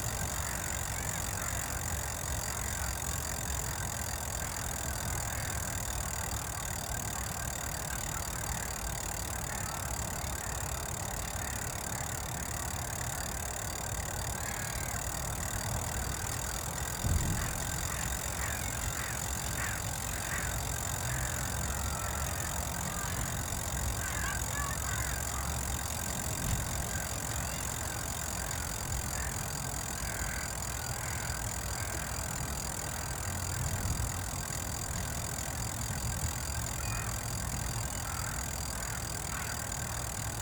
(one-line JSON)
{"title": "Tempelhofer Feld, Berlin - wind wheel", "date": "2013-12-27 12:30:00", "description": "improvised wind wheel turning and clicking in a fresh wind from south west\n(PCM D50)", "latitude": "52.47", "longitude": "13.42", "altitude": "51", "timezone": "Europe/Berlin"}